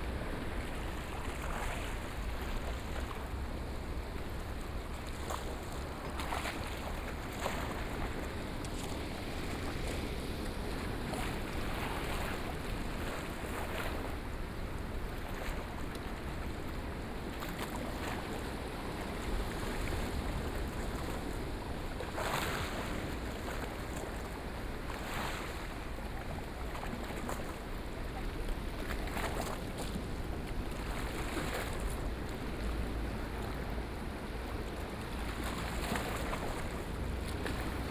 頭城鎮大里里, Yilan County - seashores
The sound of the sea seashores, Sound of the waves, Very hot weather
Sony PCM D50+ Soundman OKM II
Toucheng Township, Yilan County, Taiwan, 21 July